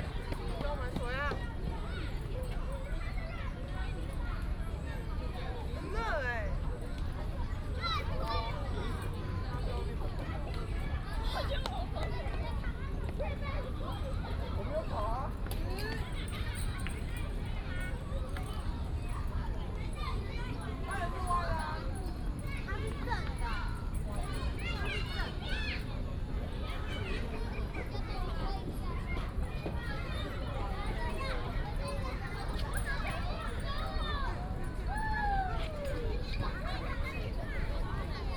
Children Playground, in the park
Taipei City, Taiwan, 26 June 2015